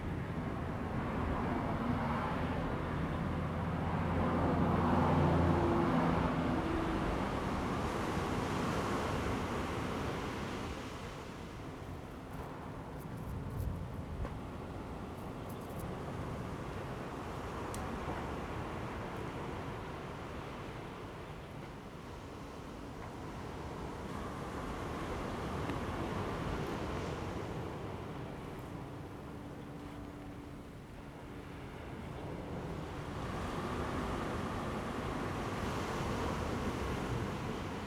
寧浦, Changbin Township - the waves and Traffic Sound
Sound of the waves, Traffic Sound, Thunder
Zoom H2n MS+XY
Changbin Township, Taitung County, Taiwan, 8 September 2014, 14:35